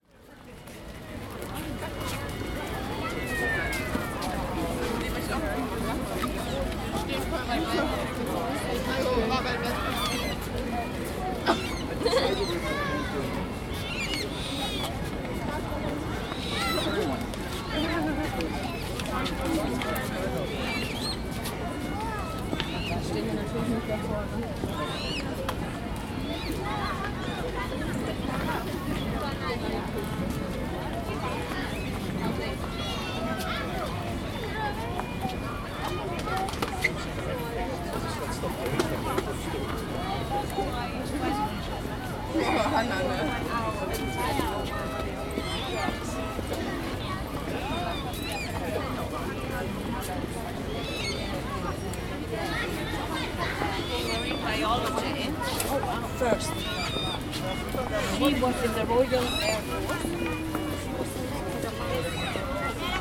This is the first time I have ever heard a busker on The Blue Bridge. A violinist. Also, bird sounds and many tourists. A hand held recording on a Zoom H2n with no wind shield.
London, UK, 14 June 2017